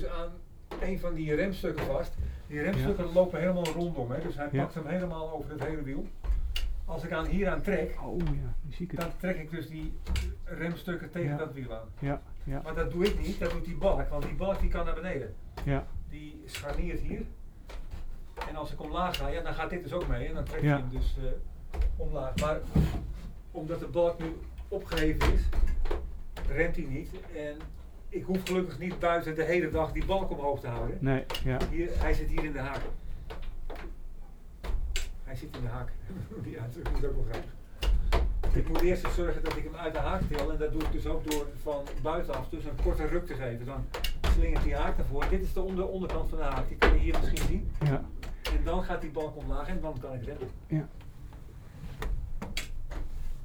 naar boven onder de molenkap zonder te malen - het geluid van remmen heet vangen
remmen heet vangen /
about the breaks of the windmill